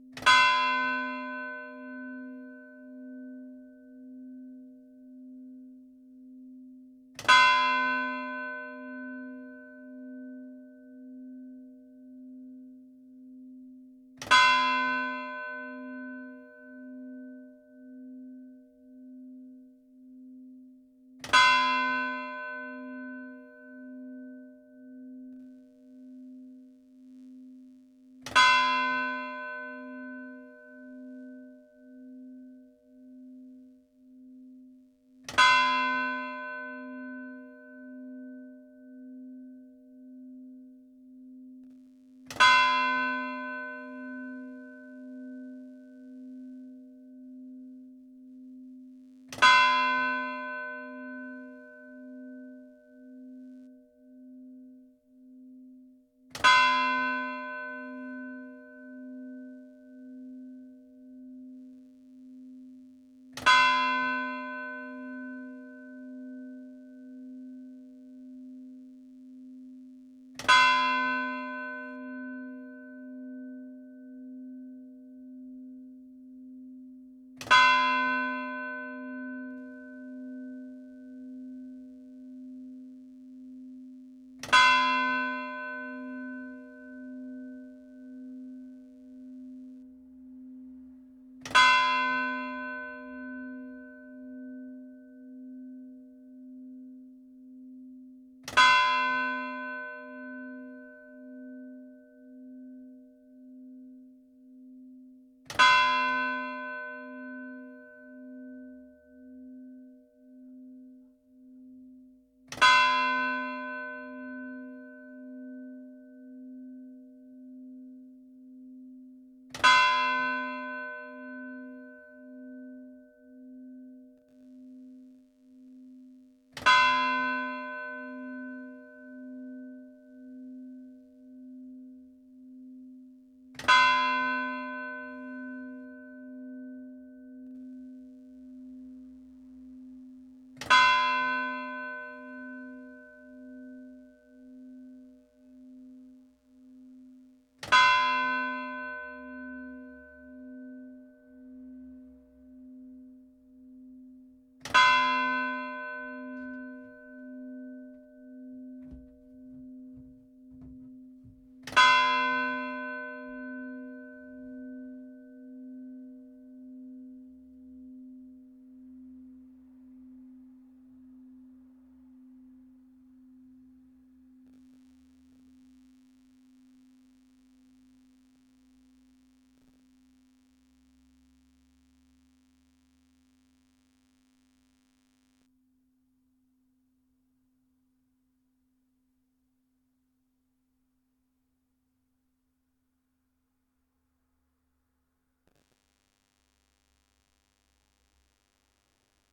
Rue de l'Église, Fontaine-Simon, France - Fontaine Simon - Église Notre Dame
Fontaine Simon (Eure et Loir)
Église Notre Dame
Le Glas